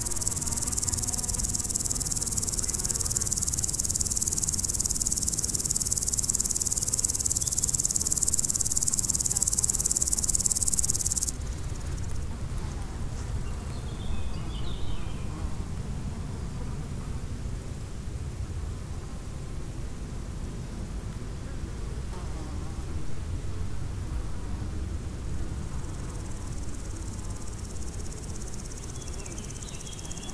Meadow at średniak, Szczawnica, Poland - (183 BI) Meadow insects
Binaural recording of insects on a meadow, on Średniak Hill.
Recorded with Soundman OKM on Sony PCM D100